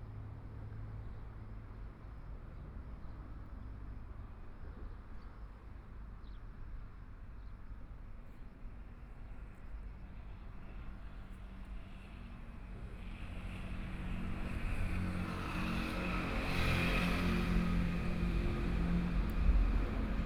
宜蘭市南津里, Yilan County - In the bottom of the track
In the bottom of the track, Traffic Sound, Birds, Trains traveling through
Sony PCM D50+ Soundman OKM II
Yilan County, Taiwan